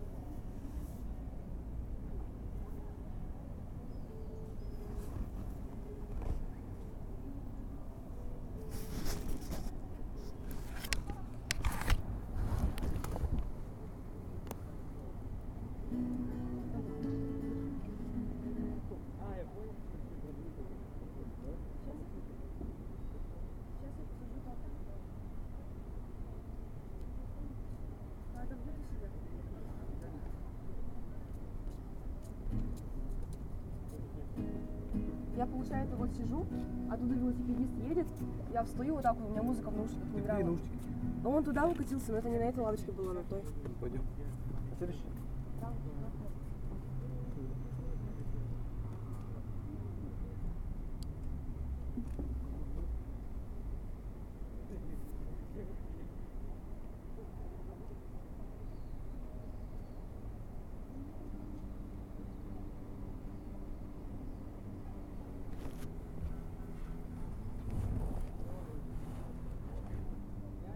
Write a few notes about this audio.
Young people are sitting on benches in a park in Yakutsk, the capital of the Sakha republic. The benches are around the Taloye lake, where there are lot of mosquitoes in the evening. The evening is hot and full of smoke. The young people are laughing, playing the guitar and talking. Talks by other visitors of the park can also be heard. These people may sound so careless if you take into account the current war situation. However, many young people over there seem to be anti-militaritstic, having to somehow live far away from Moscow and still be engaged in the political proceses.